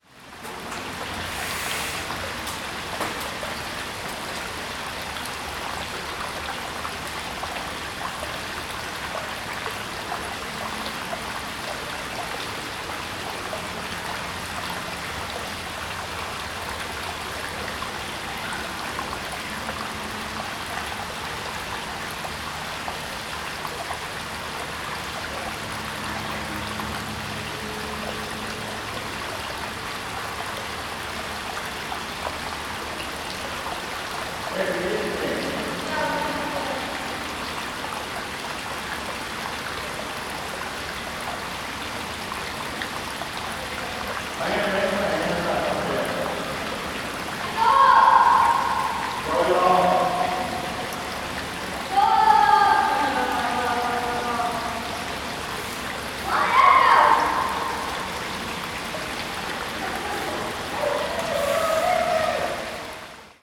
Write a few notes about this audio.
Stream and bike trail pass through this tunnel.